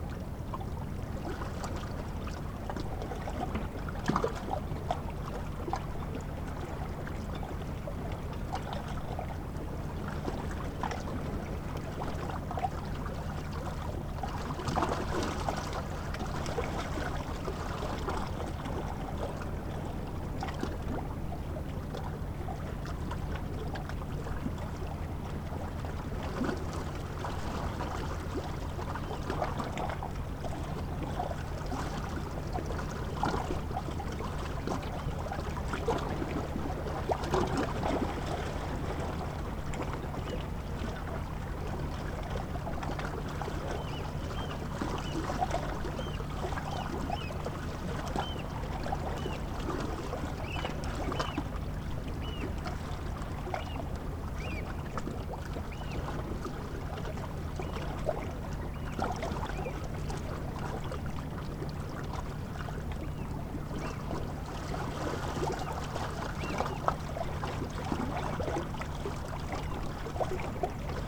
lemmer, vuurtorenweg: marina - the city, the country & me: marina
lapping waves of the ijsselmeer
the city, the country & me: june 20, 2011
Lemmer, The Netherlands